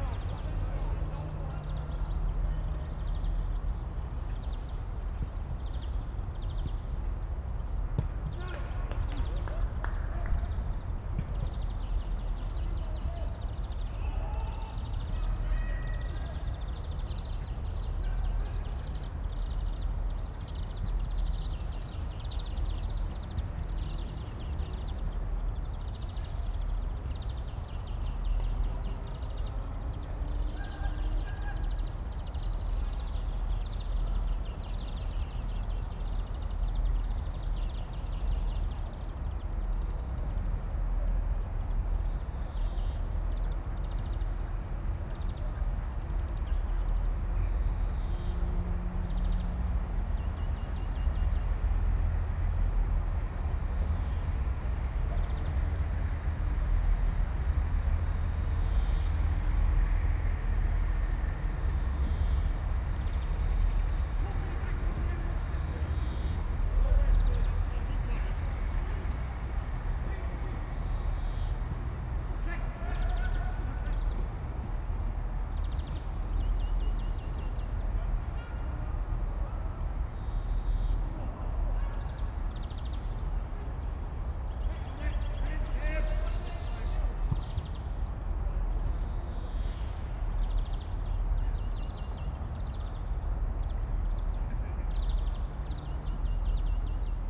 small pier, Castle Mill Stream, Oxford, UK - morning ambience, train
on a small pier at Castle Mill Stream, listening to passing-by trains and the ambience of that sunny morning in early spring.
(Sony PCM D50, Primo EM172)